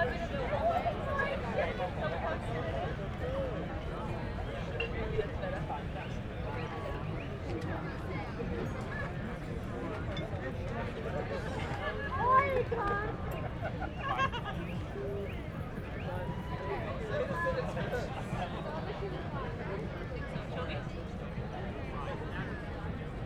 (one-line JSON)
{"title": "Hasenheide, Berlin, Deutschland - party crowd", "date": "2020-06-21 21:55:00", "description": "because of the lack of open clubs during the pandemic, the international party crowd has shifted to parks. The pressure on the green patches within the city during this spring have been immense, parks are wasted and polluted, and drying out because of missing rain.u\n(SD702, Sennheiser MKH8020)", "latitude": "52.48", "longitude": "13.41", "altitude": "48", "timezone": "Europe/Berlin"}